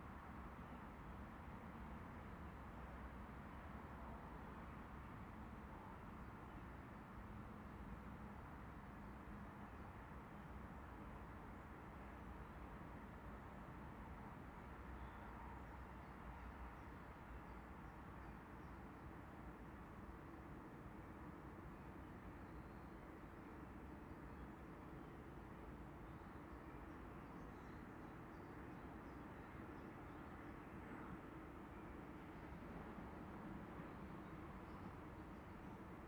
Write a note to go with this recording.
Several trains passing, slight editing: shortened, [Hi-MD-recorder Sony MZ-NH900, Beyerdynamic MCE 82]